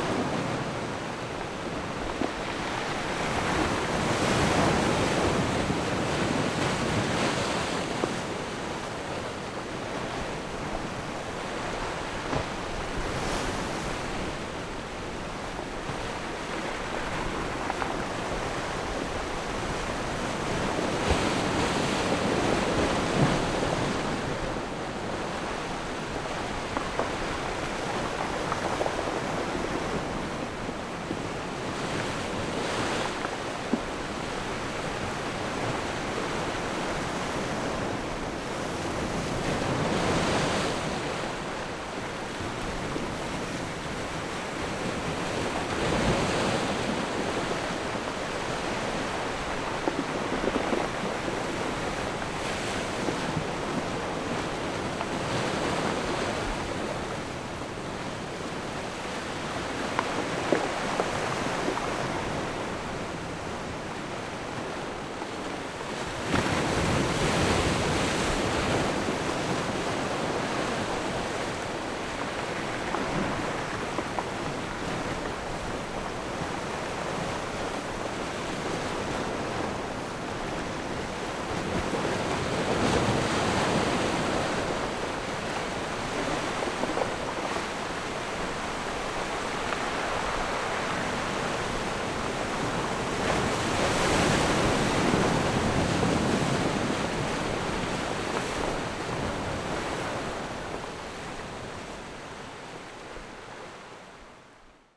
大漢據點, Nangan Township - Big Wave
On the bank, Big Wave
Zoom H6 +Rode NT4